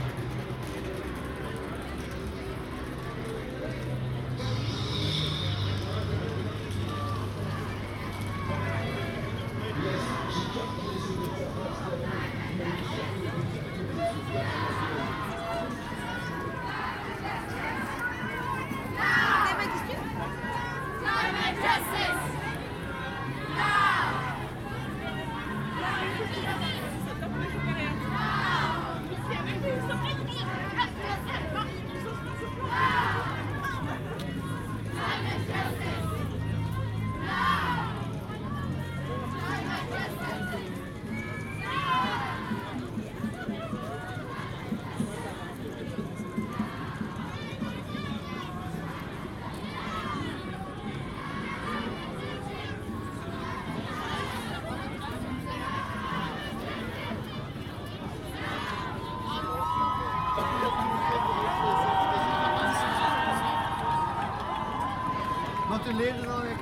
Youth for Climate March, chanting, singing, music, horns. Recorded Zoom H2n
Région de Bruxelles-Capitale - Brussels Hoofdstedelijk Gewest, België - Belgique - Belgien, 1 April 2019